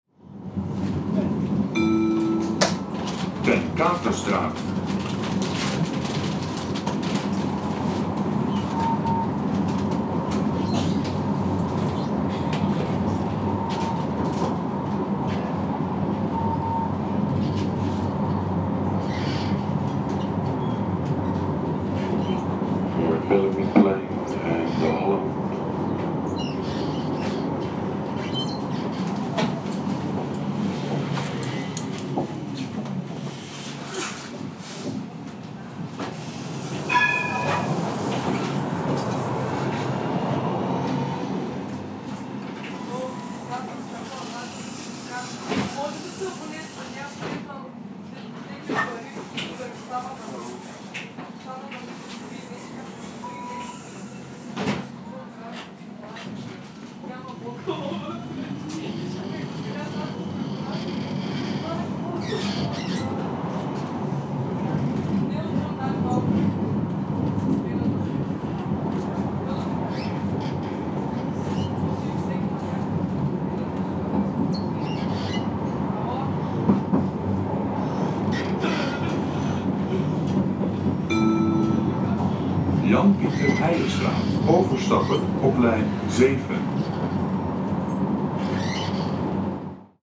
Ten Katestraat, Amsterdam, Niederlande - 90s - Ten Katestraat
Tram, Amsterdam, Straßenbahn, Line 17 Lijn 17
Amsterdam, Netherlands